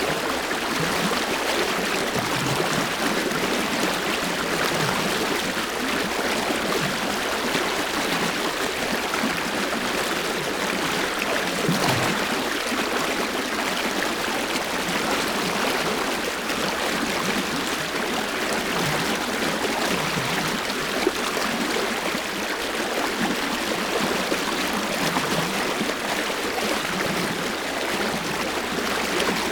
river Drava, Loka - stone, water, murmur
13 December 2015, 13:47